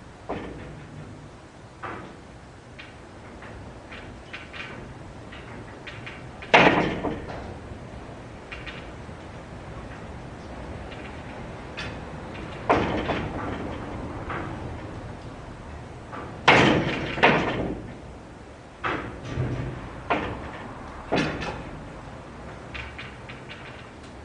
Court-St.-Étienne, Belgique - The Sambree farm
The Sambree farm, when this place was abandoned. This is during a tempest, doors bang everywhere and this is quite baleful !